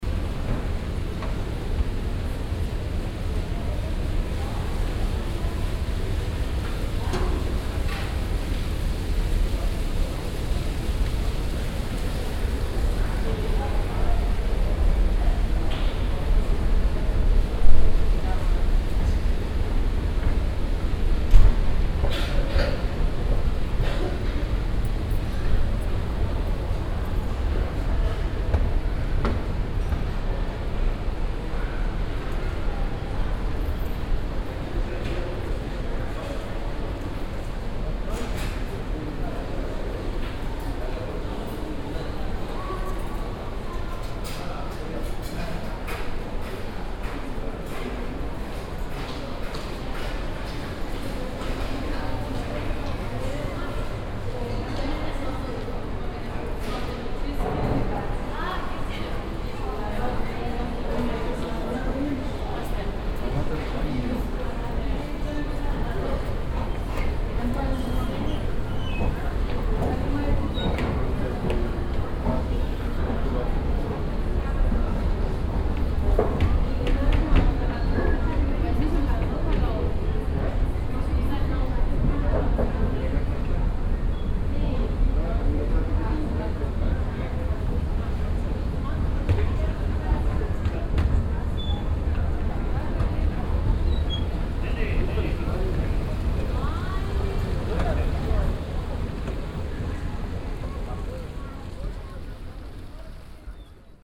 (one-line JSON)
{"title": "essen, willy brandt platz, passage", "date": "2011-06-09 22:22:00", "description": "The moving stairways down into a passage underneath the street - a short walk and up with a moving stairway again.\nProjekt - Klangpromenade Essen - topograpgic field recordings and social ambiences", "latitude": "51.45", "longitude": "7.01", "altitude": "89", "timezone": "Europe/Berlin"}